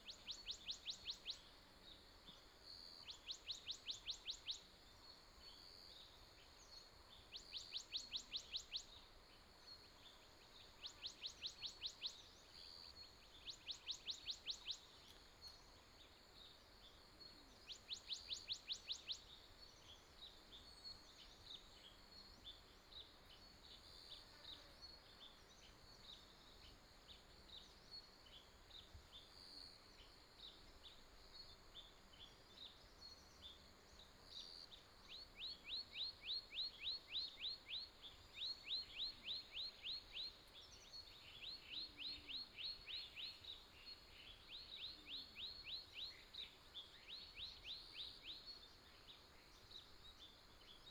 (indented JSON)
{
  "title": "達仁鄉台東縣, 達保農場 - Early morning mountain",
  "date": "2018-04-06 05:49:00",
  "description": "Early morning mountain, Bird cry, Insect noise, Stream sound",
  "latitude": "22.45",
  "longitude": "120.85",
  "altitude": "256",
  "timezone": "Asia/Taipei"
}